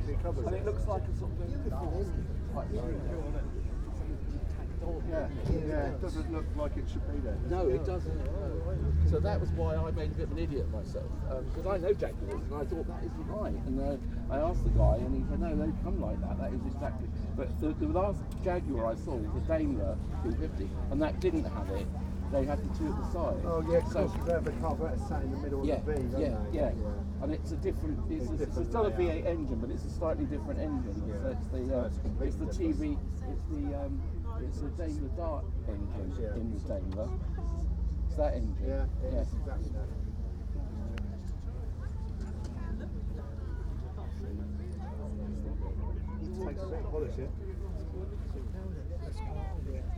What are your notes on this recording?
The lovely hamlet of Hambledon in Oxfordshire played host today to a classic car meet in the recreation field behind the pub. There were Jaguars, Ferraris Aston Martins Triumphs and many more. I walked around the show ground with the Sony M10 and built in mics, it is unedited.